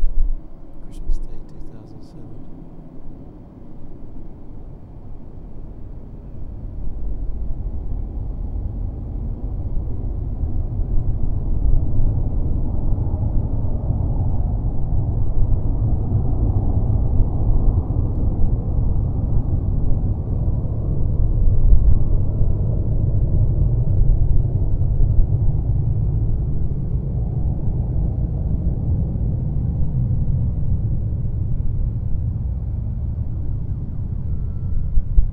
los angeles, 2008, LAX soundscape, invisisci